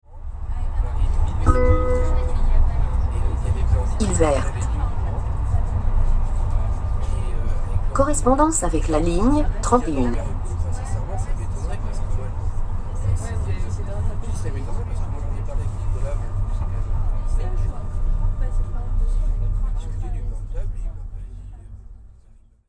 Agn s at work L'ile Verte RadioFreeRobots
Grenoble, France